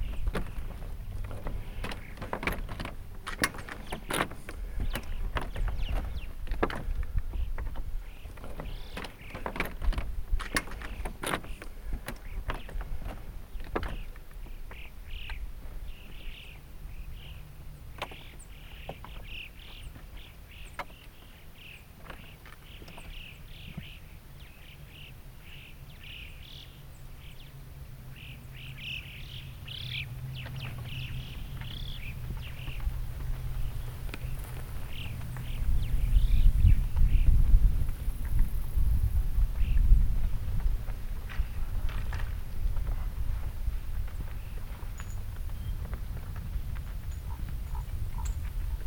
Ploskos, Konitsa, Greece - Ploskos Soundscape
This is a collage of sounds all recorded yesterday on an Olympus LS 14 as we walked from the Katfygio (Refuge Hut) to Ploskos at 2397m in the Tymfi range of mountains. There was a fair breeze and glorious sunshine. We made our way through the limestone pavements and rock rivers, sounding like crockery. We could hear Rock Pippits, Choughs (please correct me if wrong)and in the distance some chamois descending from the climbers peak (the real peak is inaccessible to all but the insane!
6 September, 13:28